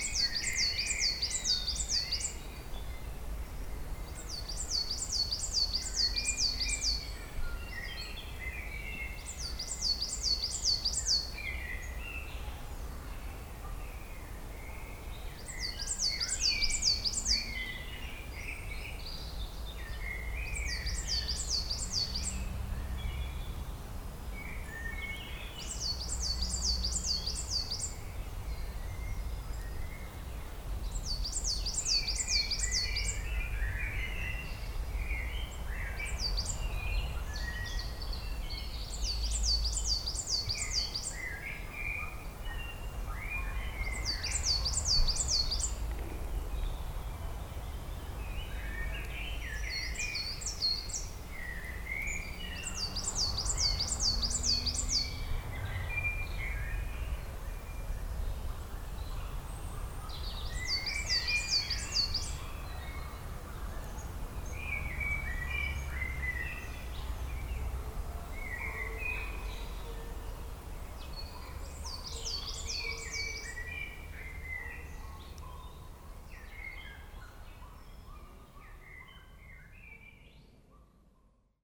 Quaix-en-Chartreuse, France - Quiet wood
Very quiet ambiance in the woods, birds singing and a lot of soothing silence.
30 March 2017, 17:30